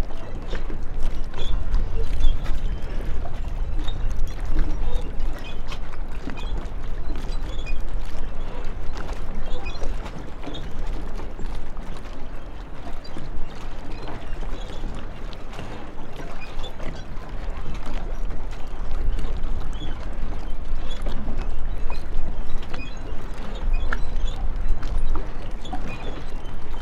Hamnslåtten, Höga Kusten. Boat moorings.
Boats moored in small port.
Mjällom, Sweden